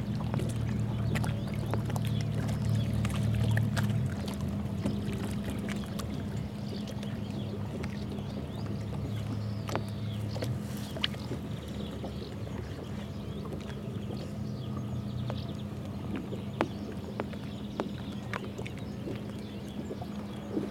Lake of Piediluco, Umbria, Lapping and Bells
lapping, bells and fishing boat